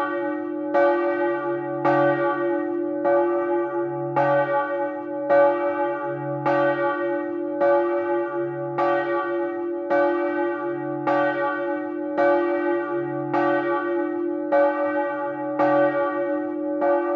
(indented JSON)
{
  "title": "Rue du Doyen, Fruges, France - clocher de l'église de Fruges",
  "date": "2019-03-11 10:00:00",
  "description": "Fruges (Pas-de-Calais)\nCloche de l'église - volée",
  "latitude": "50.52",
  "longitude": "2.14",
  "altitude": "98",
  "timezone": "Europe/Paris"
}